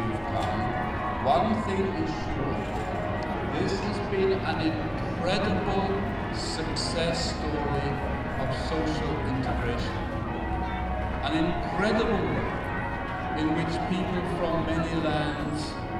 neoscenes: bells and Irish speech
Sydney NSW, Australia